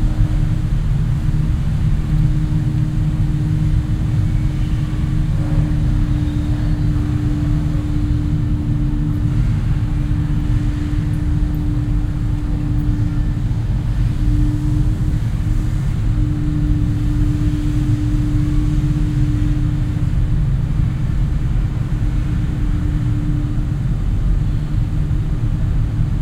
soundmap: köln/ nrw
toreinfahrt, grosses rohr zu einer lüftungsanlage dazu im hintergrund staubsaugergeräusche, nachmittags
project: social ambiences/ listen to the people - in & outdoor nearfield recordings
bruesselerstrasse, einfahrt, June 2008